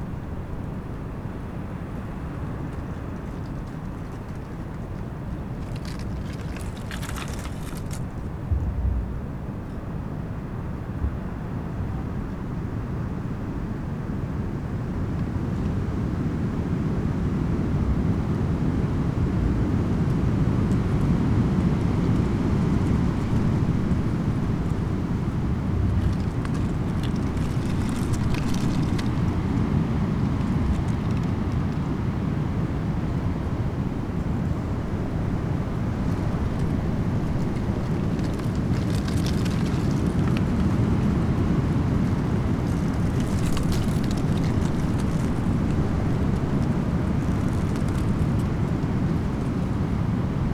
dry leaves during storm
the city, the country & me: march